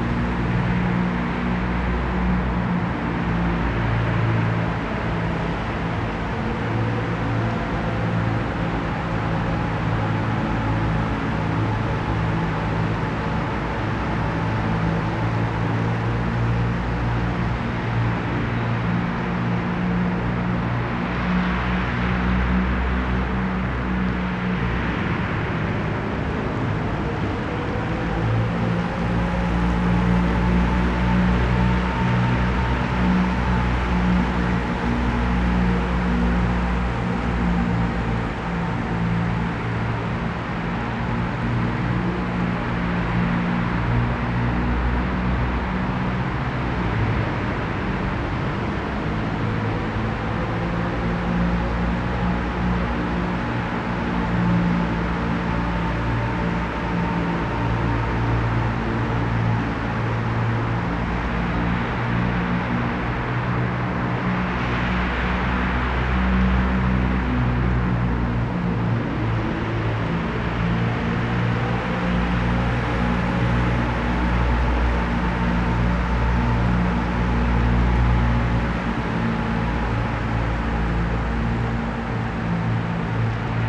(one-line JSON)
{"title": "Düsseltal, Düsseldorf, Deutschland - Düsseldorf. Ice Stadium, Ice machine", "date": "2012-12-11 07:10:00", "description": "Inside the old Ice Stadium of Duesseldorf. The sound of the ice machine driving on the ice cleaning the ice surface. In the background the street traffic from the nearby street.\nThis recording is part of the exhibition project - sonic states\nsoundmap nrw -topographic field recordings, social ambiences and art places", "latitude": "51.24", "longitude": "6.80", "altitude": "46", "timezone": "Europe/Berlin"}